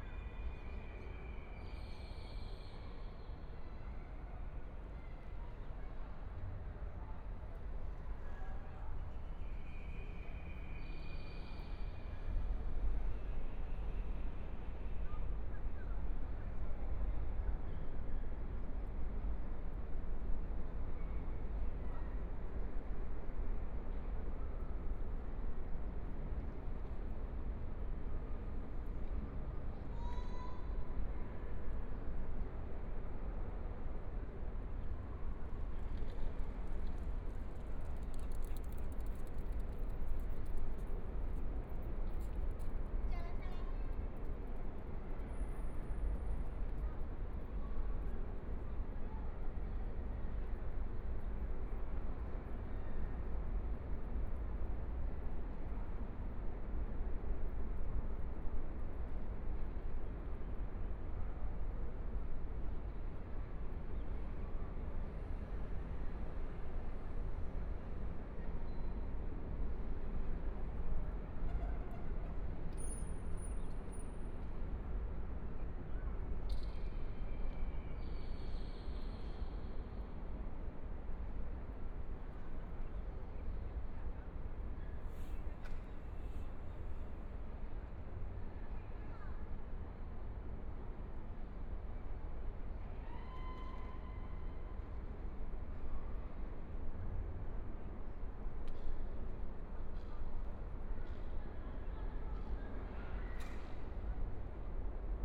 Holiday, Sitting under the bridge, Sunny mild weather, Birds singing, Traffic Sound, Binaural recordings, Zoom H4n+ Soundman OKM II
Taipei City, Taiwan